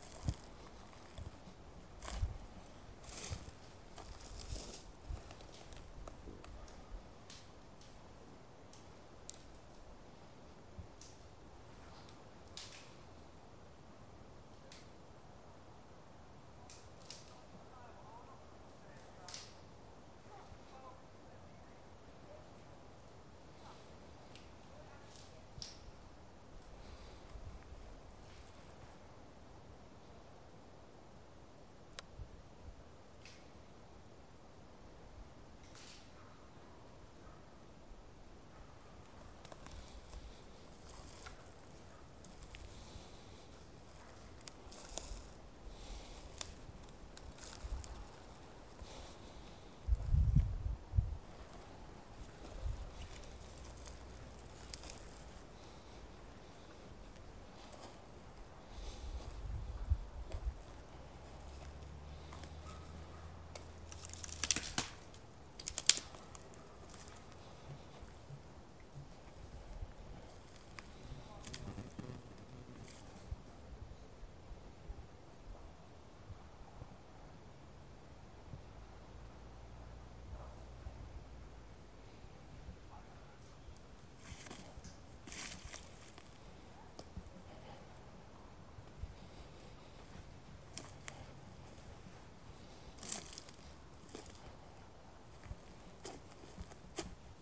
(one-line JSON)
{"title": "Musapstan Forest Park, Zadar, Croatia", "date": "2020-03-21 15:03:00", "description": "I walk through the woods with my family", "latitude": "44.13", "longitude": "15.28", "altitude": "75", "timezone": "Europe/Zagreb"}